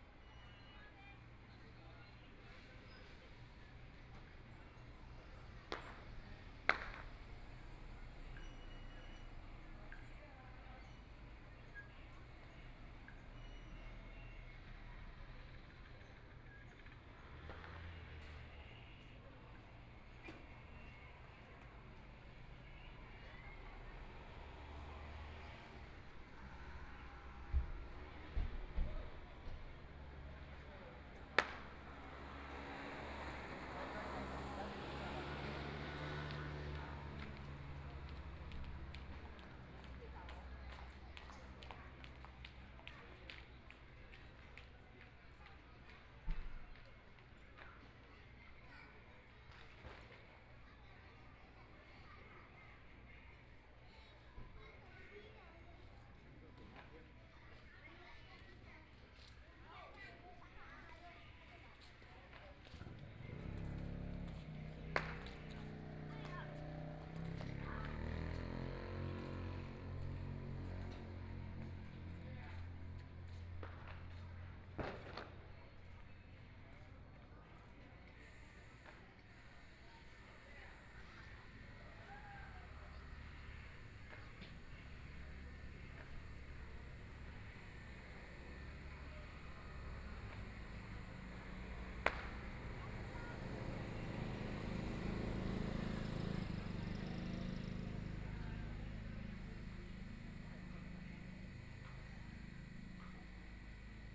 Traditional New Year, The plaza in front of the temple, The sound of firecrackers, Motorcycle sound, Very many children are playing games, Zoom H4n+ Soundman OKM II